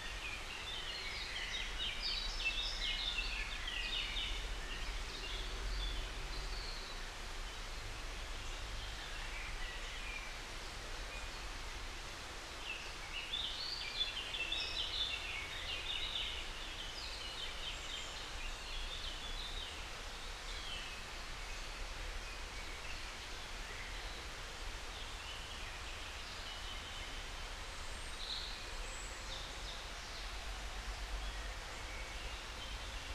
{
  "title": "Grgar, Grgar, Slovenia - Near stream Slatna",
  "date": "2020-06-20 07:25:00",
  "description": "Birds in the forest. Recorded with Sounddevices MixPre3 II and LOM Uši Pro.",
  "latitude": "46.00",
  "longitude": "13.66",
  "altitude": "334",
  "timezone": "Europe/Ljubljana"
}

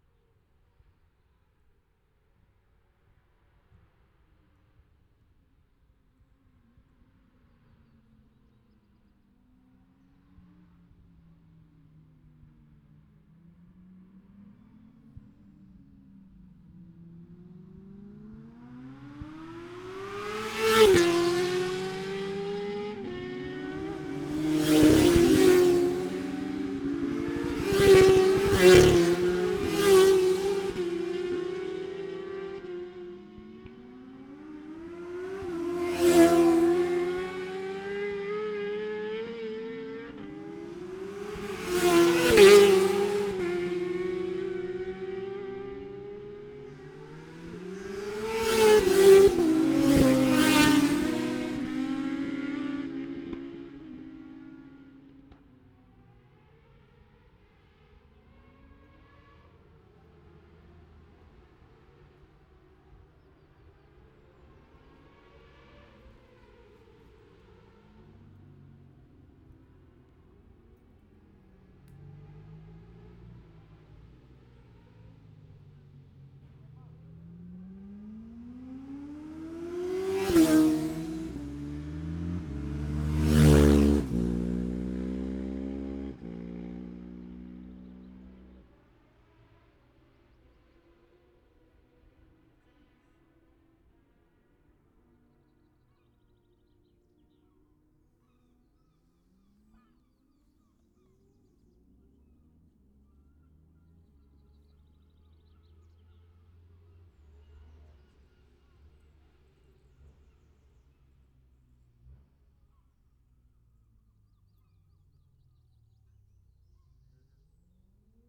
{
  "title": "Scarborough, UK - motorcycle road racing 2017 ... 1000cc ...",
  "date": "2017-04-22 10:31:00",
  "description": "1000cc practice ... odd numbers ... Bob Smith Spring Cup ... Olivers Mount ... Scarborough ... open lavaliers mics clipped to sandwich box ...",
  "latitude": "54.27",
  "longitude": "-0.41",
  "altitude": "147",
  "timezone": "Europe/London"
}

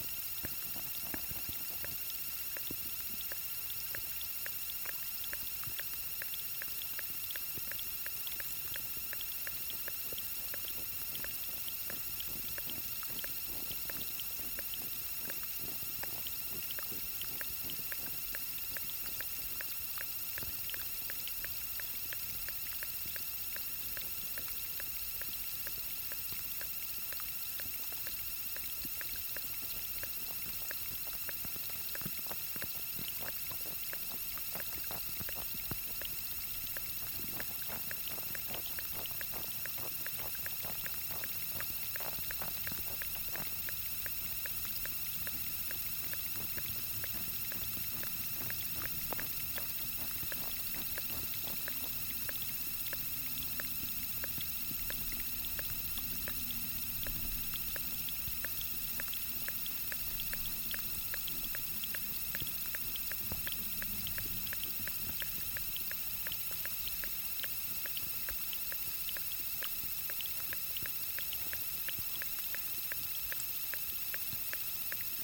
{
  "title": "Allotment Soup: the Isle of Walney Community Growing Space, Mill Ln, Walney, Barrow-in-Furness, UK - Pond at allotment Soup",
  "date": "2021-05-18 12:06:00",
  "description": "Allotment Soup have created a pond here. This recording was made as part of two days of sound walks with local schools organised by Art Gene. It was a warm sunny spring day with light wind. The recording was made at lunchtime between two school visits. It's a stereo mix of three hydrophones spread across the pond. On the left and right are Aquarian Audio H2a's and in the centre an Ambient ASF-1. Some light eq. SD MixPre-10t.",
  "latitude": "54.11",
  "longitude": "-3.25",
  "altitude": "12",
  "timezone": "Europe/London"
}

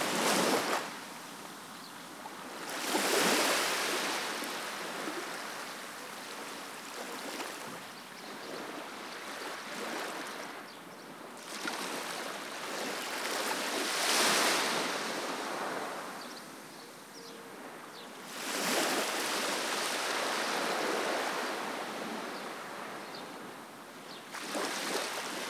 {
  "title": "Spain - Waves Pegura Beach",
  "date": "2017-03-09 08:30:00",
  "description": "Waves lapping Segura Beach, it had been windy the day before but this day sunny and calm. Sony M10 Rode Stereo Videomic Pro X",
  "latitude": "39.54",
  "longitude": "2.45",
  "altitude": "1",
  "timezone": "Europe/Madrid"
}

